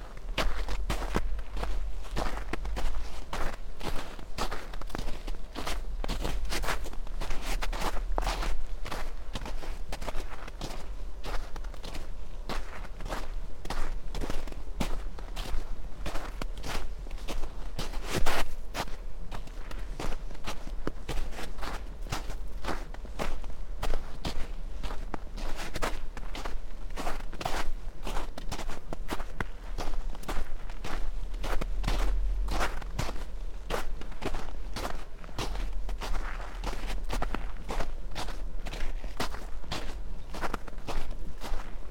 sonopoetic path, Maribor, Slovenia - walking poem, drops of life
snow, steps, spoken words, whisperings, small stream, distant traffic noise